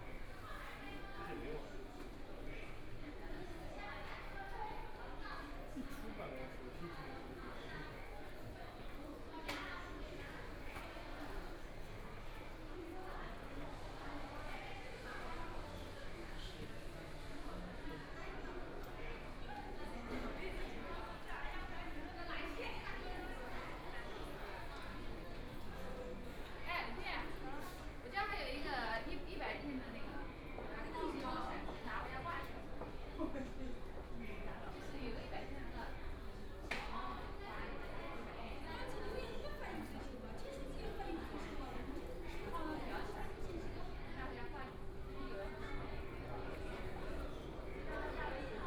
Walking through the station, On the platform waiting for the train, Binaural recording, Zoom H6+ Soundman OKM II